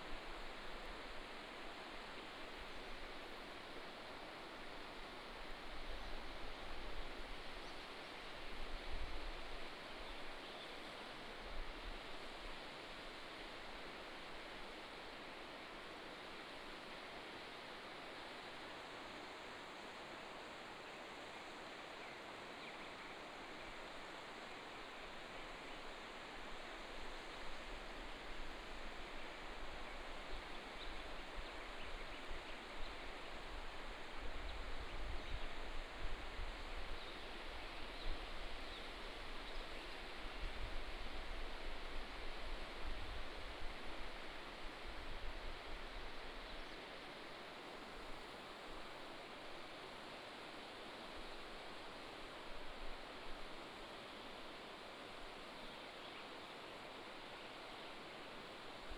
土板產業道路, Tuban, Daren Township - Standing on the cliff
Early morning mountain, Standing on the cliff, Bird cry, Stream sound